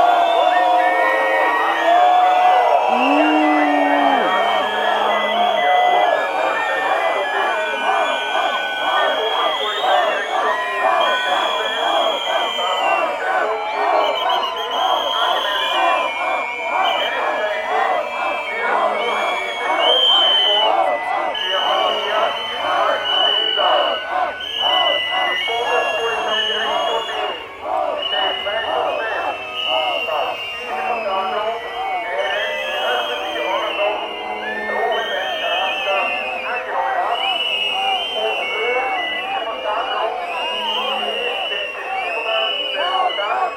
{"title": "Vienna, Rossauerlände - Protest (schuettelgrat)", "date": "2010-10-06 18:30:00", "description": "Protest against the deportation of two children to Kosovo.", "latitude": "48.22", "longitude": "16.37", "altitude": "167", "timezone": "Europe/Vienna"}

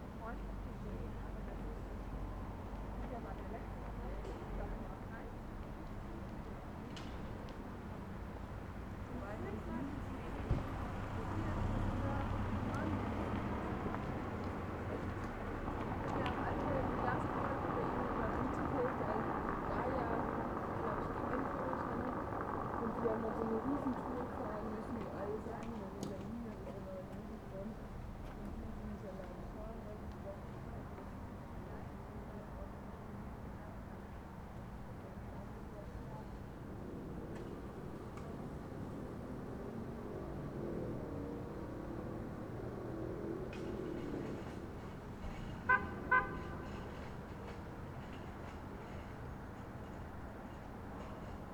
{"title": "Berlin: Vermessungspunkt Maybachufer / Bürknerstraße - Klangvermessung Kreuzkölln ::: 18.09.2010 ::: 02:08", "date": "2010-09-18 02:08:00", "latitude": "52.49", "longitude": "13.43", "altitude": "39", "timezone": "Europe/Berlin"}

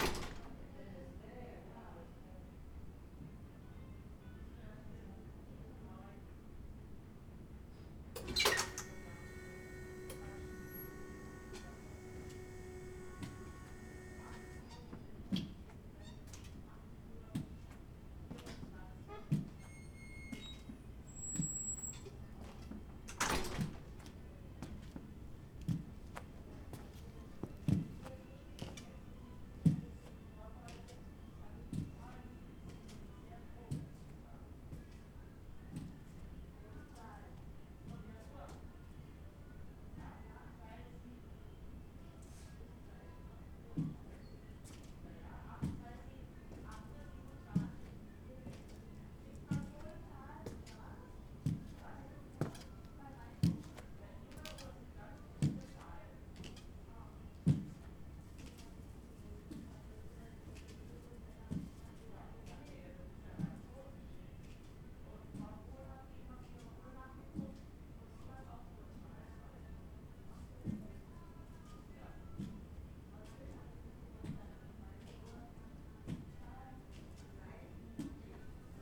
{"title": "berlin, urban hospital - walking with crutches", "date": "2010-01-16 20:30:00", "description": "berlin, urban hospital, saturday evening, walking the hallway with crutches", "latitude": "52.49", "longitude": "13.41", "altitude": "41", "timezone": "Europe/Berlin"}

